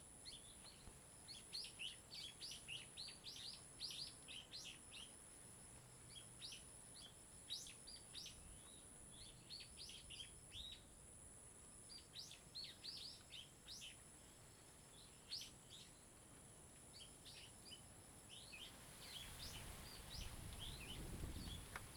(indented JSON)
{
  "title": "紅頭森林生態區, Ponso no Tao - Birds singing",
  "date": "2014-10-29 16:19:00",
  "description": "Birds singing\nZoom H2n MS +XY",
  "latitude": "22.01",
  "longitude": "121.57",
  "altitude": "57",
  "timezone": "Asia/Taipei"
}